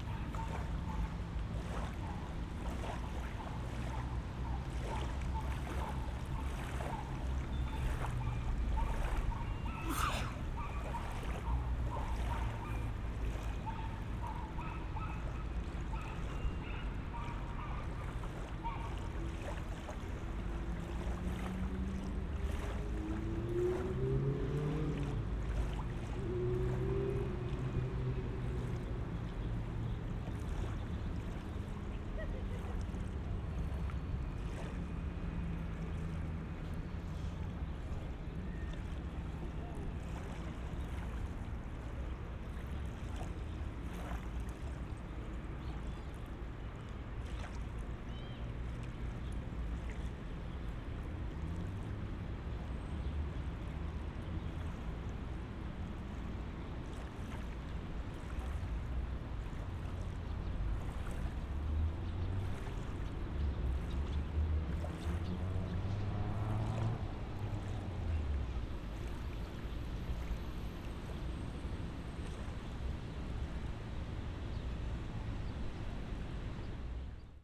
Slubice, Odra
city hum near river odra, slubice, poland.